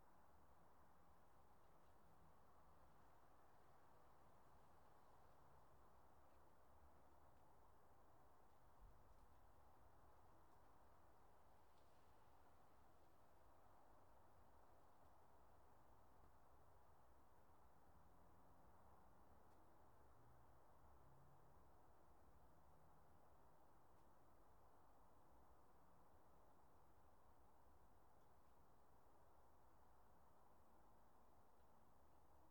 Bluebell Road, Swaythling, Southampton, UK - 012 Silence, almost